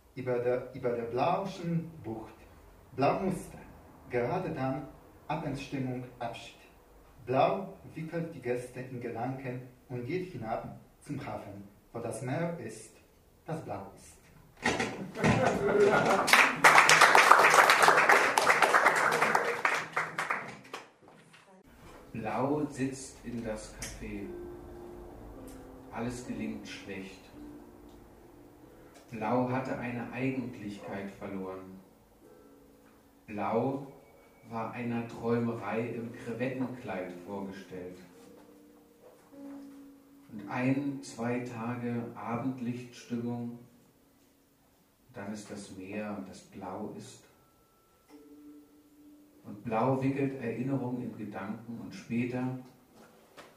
The sixth symposium had found again shelter from winterish winds at the warmhearted KANAL. Thousands of loudly read out letters take too long to be pronounced, so we will metonymically present two texts on Blau. Read by two particpants, of different moments, moods and mothertongues.